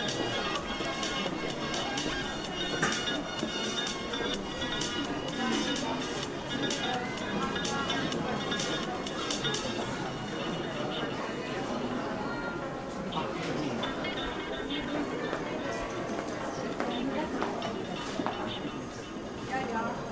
Official plans of future urban development in Hamburg aim to restructure the Große Bergstrasse in Hamburg-Altona. One aspect of the plan is the construction of a large inner city store by the IKEA corporation on the site of the former department store "Frappant", actually used as studios and music venues by artists.
You find the sounds of the Ikea furniture store layered on the map of the Frappant building, next to sounds of the existing space.
Offizielle Umstrukturierungspläne in Hamburg sehen vor das ehemalige Kaufhaus „Frappant“ in der Altonaer Großen Bergstrasse – seit 2006 Ateliers und Veranstaltungsräume – abzureißen und den Bau eines innerstädtischen IKEA Möbelhaus zu fördern. Es gibt eine öffentliche Debatte um diese ökonomisierende und gentrifizierende Stadtpolitik.
Auf dieser Seite liegen die Sounds von IKEA Moorfleet auf der Karte der Gr. Bergstrasse neben Sounds im und um das Frappant Gebäude. Eine Überlagerung von Klangräumen.
Hamburg, Germany, 1 November 2009